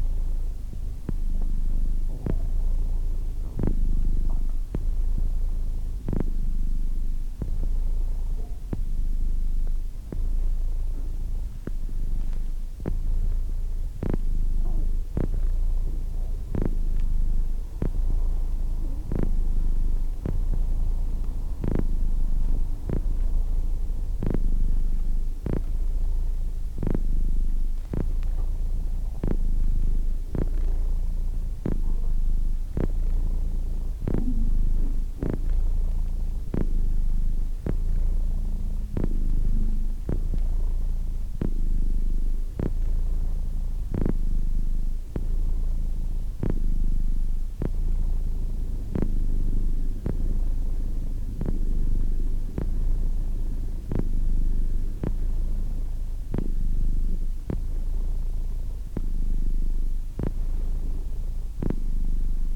Linden, Randburg, South Africa - My Purring Cat
Lying in Bed. My cat next to me. Primo EM172's to Sony ICD-UX512F.
7 August 2016, ~20:00